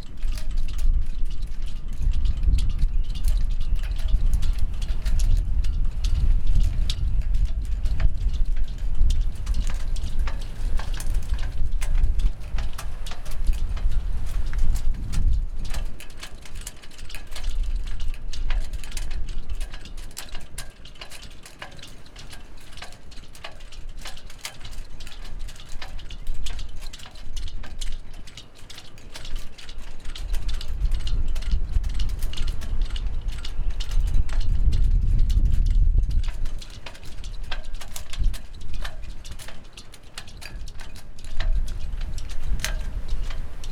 Boulevard des Émigrés, Quiberon, Frankrijk - Sailboats
The sound of the port of Quibéron - sailboats in the wind. (Recorded with ZOOM 4HN)
Quiberon, France, 15 October 2015, ~16:00